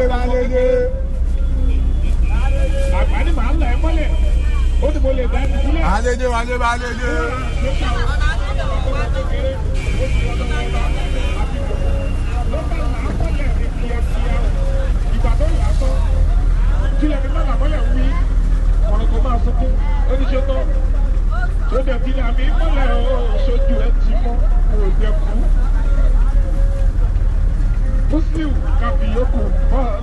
Yaba Lagos - Lagos by Bus(Obalende) with Fuji soundtrack (LagosSoundscape)